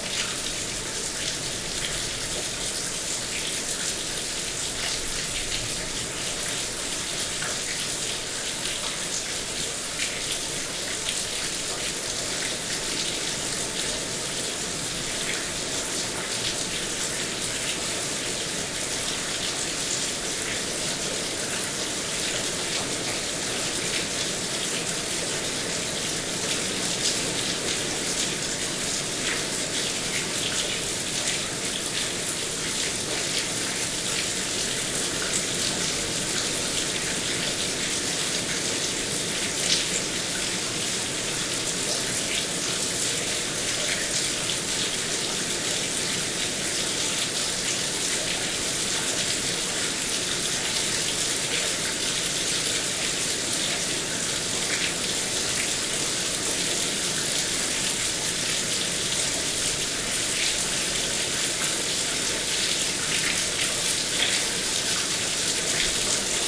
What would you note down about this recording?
During all the evening, a brutal sleet shower is falling on a small very solitary hamlet named Le Fau, in the Cantal mountains. From the front of a small degraded building, water is falling on the ground.